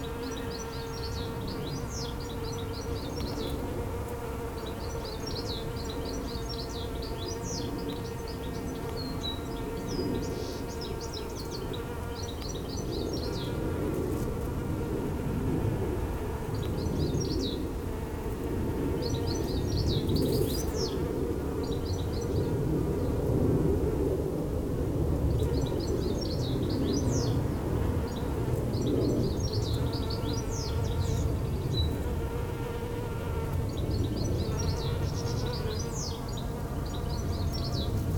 A Japanese Quince bush full of bees - bees in the bush
One of the first days of spring, and the garden felt beautifully alive. It was a Sunday, and we were returning home from a wonderfully romantic Wedding. The sunny street was its usual quiet Sunday self, with the murmur of traffic from the nearby main road drifting over and mixing with the chatter of the birds in the trees in the gardens. As we unpacked the car, I noticed that many small bees were busily working at the pink flowers in a nearby quince bush. I remembered this is an annual sound for us, and I popped my little recorder down inside the foliage to capture the sound of this labour. You can hear along with their buzzing, the light hand of the wind rifling through the stiff branches, and the aeroplanes that constantly pass over this area, giving the days in our street their distinctive sense of time. There is also a bird perching in the winter flowering cherry blossom tree, whose song delights for the last portion of the recording.
Reading, UK, 13 April 2014, 16:45